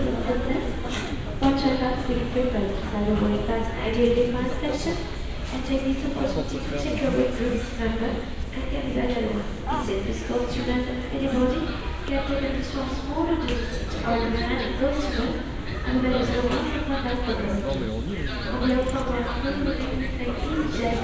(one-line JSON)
{"title": "Airport of Blagnac, Blagnac, France - Voice in a airport of Blagnac, Blangnac, France.", "date": "2016-12-21 09:00:00", "description": "A female voice invites passenger to go to a specific gate, people are talking and waiting for the check in. French people are talking near me.", "latitude": "43.63", "longitude": "1.37", "altitude": "160", "timezone": "GMT+1"}